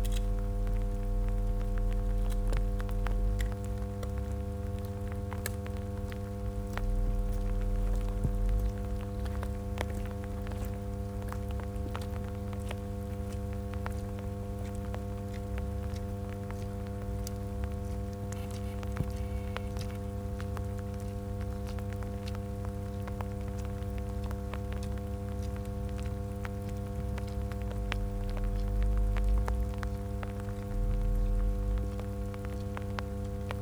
This electricity transformer house is painted with scenes of the mine and cooling towers in the background, while nature and foxes look on. Bizarre. The rain spatters onto my coat.
Weißwasser/Oberlausitz, Germany